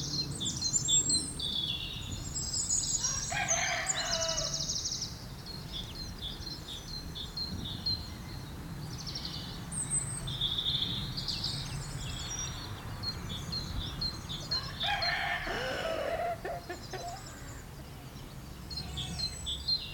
Shillingstone, Dorset, UK - Biplane and farmyard animals.
Farmyard ambiance in early spring.